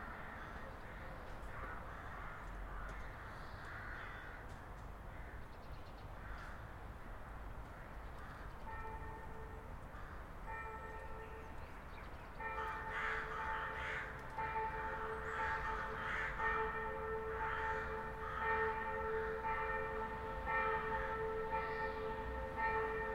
Rue Francq, Arlon, België - Saint-Martin Church Bells
Crows and church bells of the église Saint Martin, as heard from the side of a little park. Bus and car passing by.